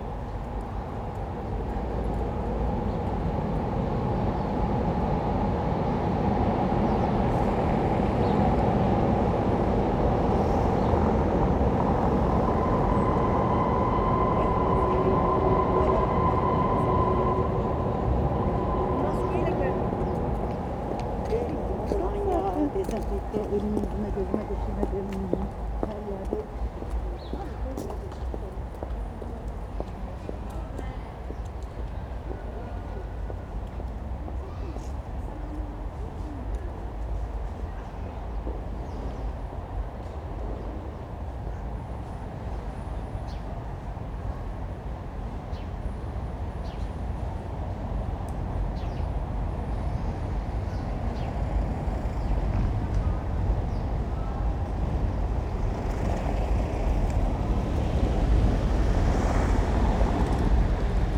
Segitzdamm, Berlin, Germany - The elevated U-Bahn moans, traffic flaps on cobble stones
The sound of the U-Bahn across Wassertorplataz and the very close sound of cars along the cobbled street. It's an open noisy acoustic. But walk off the street behind the buildings here and a quite different sound world exists.